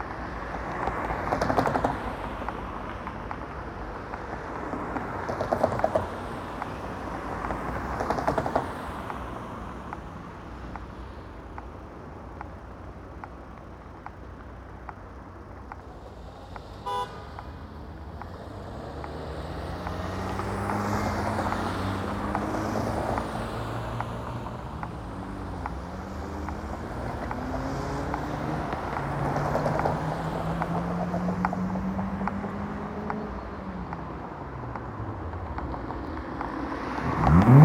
Trams, cars, traffic light clicks
Junction where traffic slaps over the tram rails, trams roar past and traffic lights click in their daily rhythm.